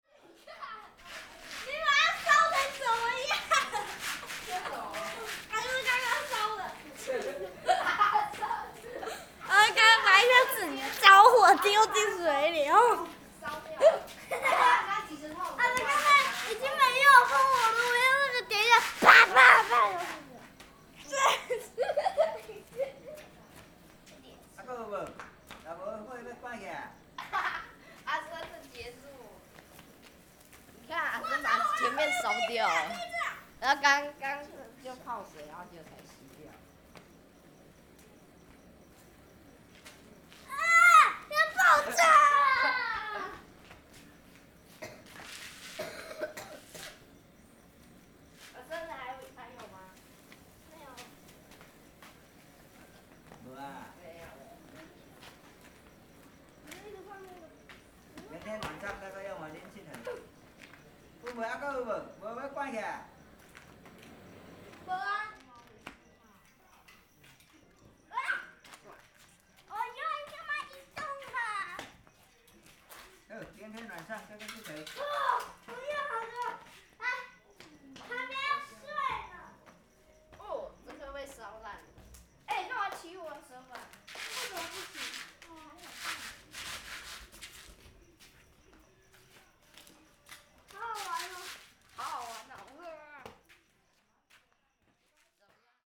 {
  "title": "蕃薯村, Shueilin Township - Child",
  "date": "2014-01-30 21:26:00",
  "description": "Kids playing firecrackers, Zoom H6 M/S",
  "latitude": "23.54",
  "longitude": "120.22",
  "timezone": "Asia/Taipei"
}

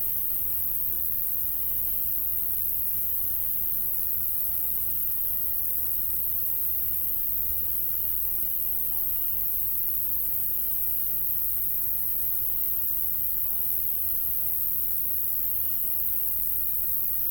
{
  "title": "Ellend, Hangfarm, Magyarország - High-pitched crickets of the nightfall",
  "date": "2019-09-06 19:06:00",
  "description": "Mass of crickets of the nightfall on the end of a very dry summer period. They're high-pitched ones 'turning on' and 'off'. One can listen to them only on the end of the day/beginning of the night.",
  "latitude": "46.06",
  "longitude": "18.38",
  "altitude": "163",
  "timezone": "Europe/Budapest"
}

